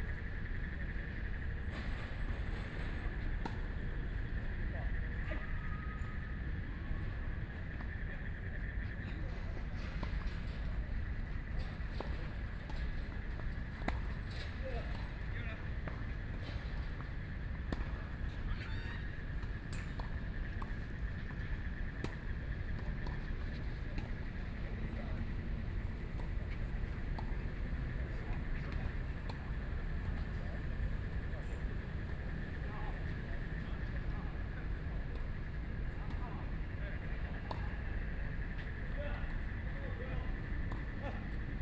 Central Park, Kaohsiung City - Tennis sounds
Frogs sound, Tennis sounds
Kaohsiung City, Taiwan, May 2014